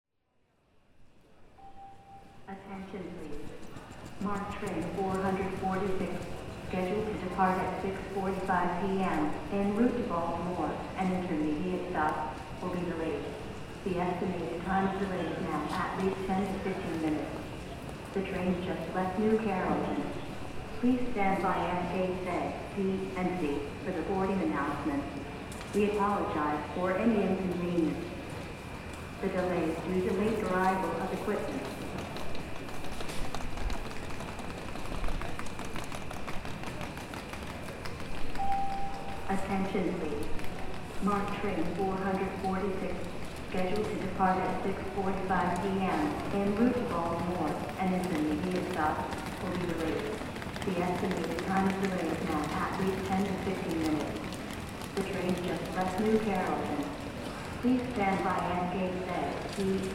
{
  "title": "North Capitol Street, Washington, DC, USA - Union Station Gate A",
  "date": "2016-12-15 18:50:00",
  "description": "Union Station Gate A\nThursday afternoon, the train was delayed.\nPeople were surrounding by the gate\nmany traveller were passing by.",
  "latitude": "38.90",
  "longitude": "-77.01",
  "altitude": "29",
  "timezone": "GMT+1"
}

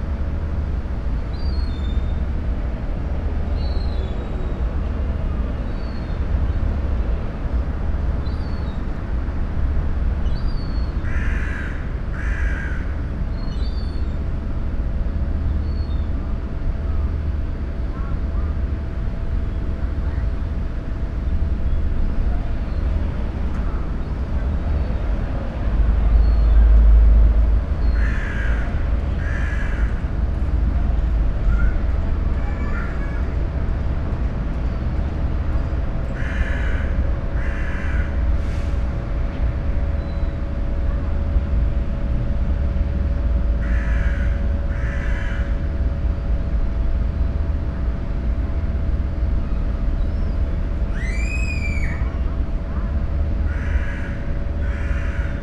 {
  "title": "Piazzale dei Legnani, Porto Nuovo, Trieste - in front of one of countless fences in Trieste",
  "date": "2013-09-09 16:44:00",
  "latitude": "45.63",
  "longitude": "13.78",
  "altitude": "1",
  "timezone": "Europe/Rome"
}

